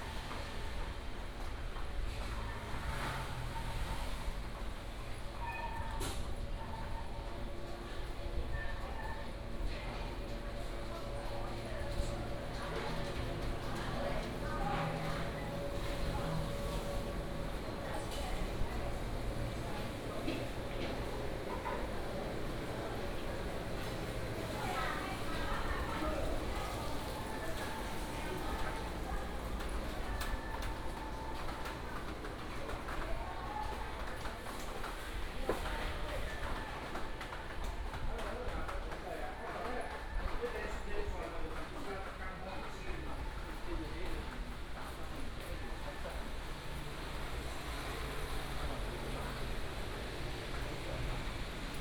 鹽埕區新樂街, Yancheng Dist., Kaohsiung City - Market area
Walking in the alley, Market area, Old shopping street, Traffic sound, Rain sound
Yancheng District, Kaohsiung City, Taiwan